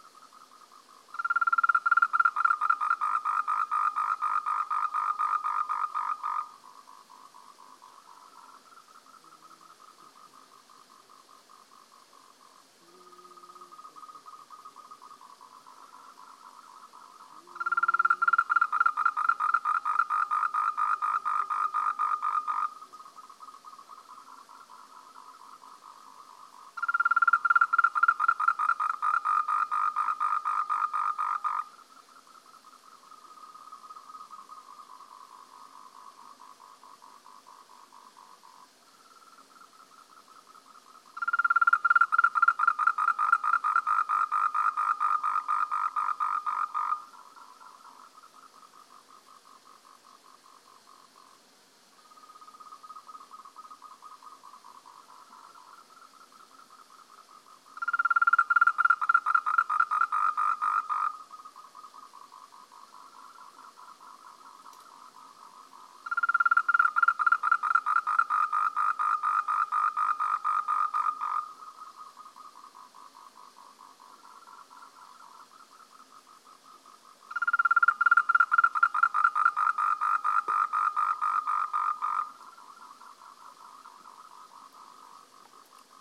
南投縣竹山鎮杉林溪主題會館前, Sun Link Sea, Taiwan - sound of tree frog
croak of an endemic tree frog of Taiwan, Rhacophorus moltrechti, emitted from road side ditch, at the elevation of 1,500 M.
Nantou County, Taiwan, 20 August